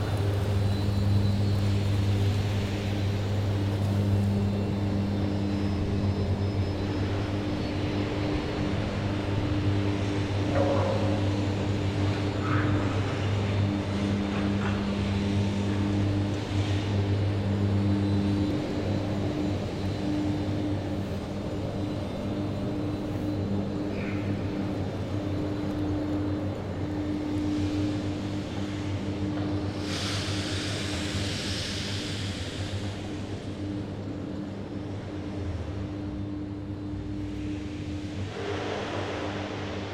Charleroi, Belgium - Industrial soundscape
Industrial soundscape near the Thy-Marcinelle wire drawing factory.
0:26 - Electric arc furnace reduce the scrap to cast iron.
12:19 - Pure oxygen is injected in the Bessemer converter, it's a treatment of molten metal sulfides to produce steel and slag.
19:58 - Unloading the scrap of the ELAN from LEMMER (nl) IMO 244620898.
28:04 - Again the electric furnace.
47:33 - Again the Bessemer converter.
Good luck for the listening. Only one reassuring word : there's no neighborhood.